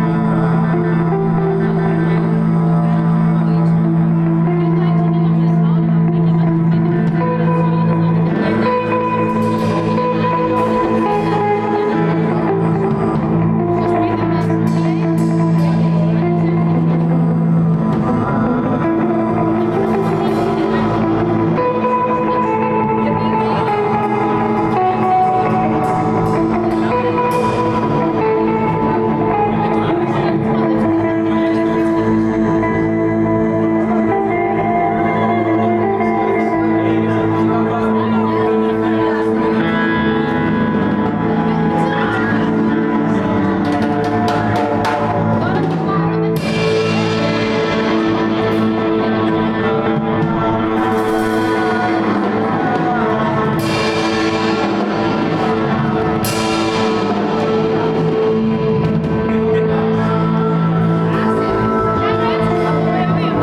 You're listening to a primal, unplanned jam that took place in fititiko kendro, university of Crete. The jam was organized by a group of people in the context of a festival called Makrovoutes. People who attended the festival contributed with guitars, drums, lute and other instruments. I used an h1n zoom recorder.